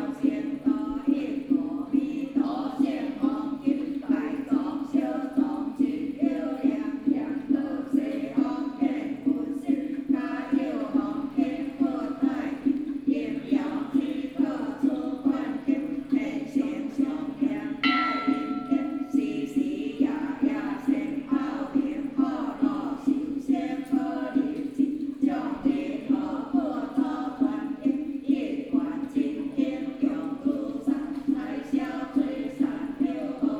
珠龍宮善化堂, 珠格里, 埔里鎮 - Chanting
Chanting, In front of the temple, Rainy Day
Puli Township, 投65鄉道33號, 24 March 2016